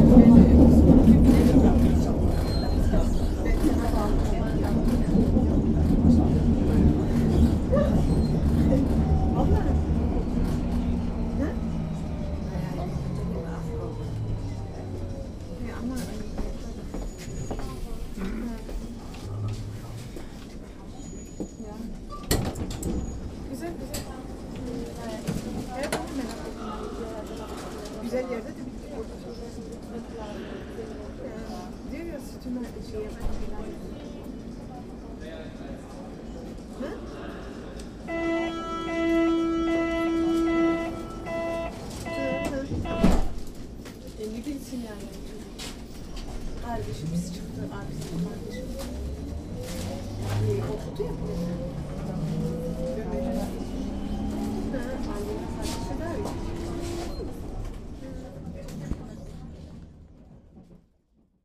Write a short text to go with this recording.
Riding the U-Bahn Mendelssohn-Bartholdy-Park - Gleisdreieck